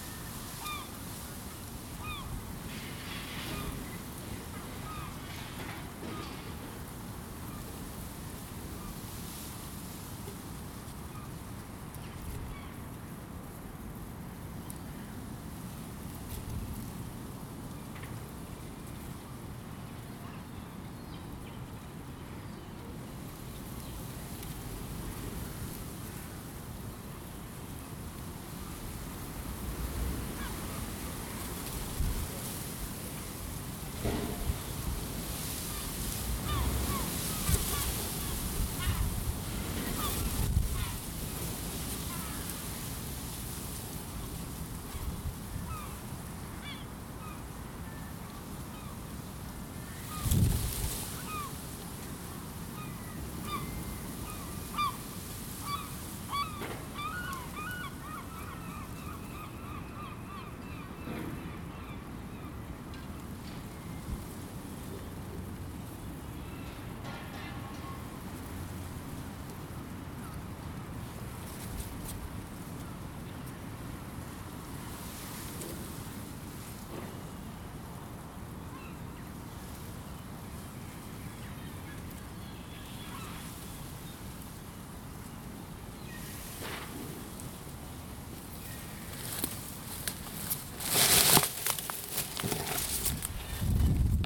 Binckhorst, L' Aia, Paesi Bassi - Bushes and seagulls singing
Bushes and seagulls having fun in the wind. No cars going by, and that is nice for this time. I used a Zoom H2n as recorder and microphone.
Nederland, European Union, 23 March 2013